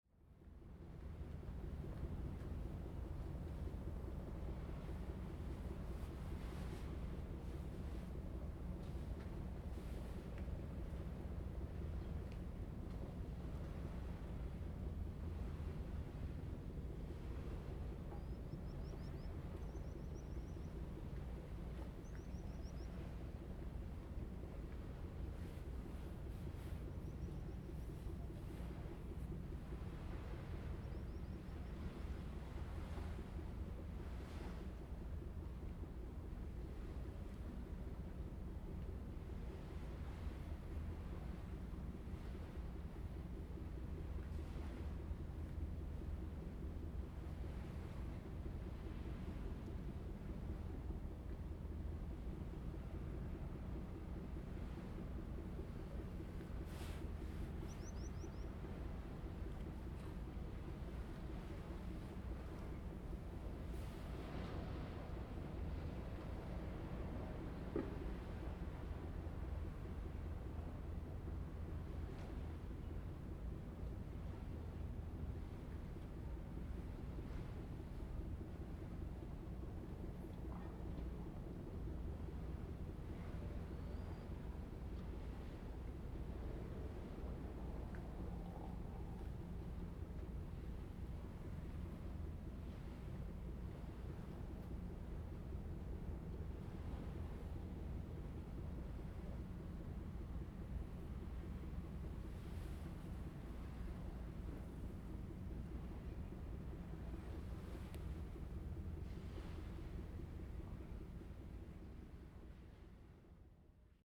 龍門漁港, Huxi Township - In the fishing port
In the fishing port
Zoom H2n MS +XY